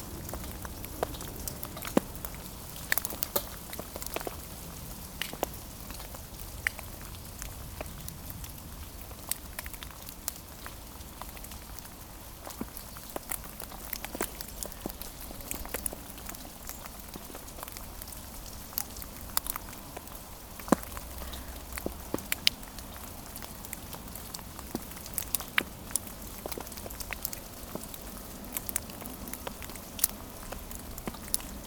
Court-St.-Étienne, Belgique - Snow is melting
On this very small road, snow is melting everywhere.